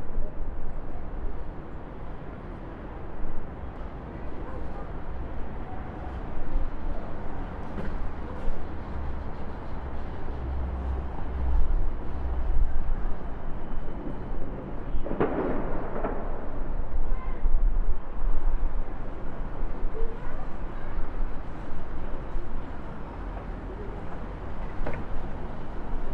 Tweede Atjehstraat, Indische Buurt, Amsterdam, Netherlands - Muiderpoort Station
Fireworks, a dog, and trains
December 2018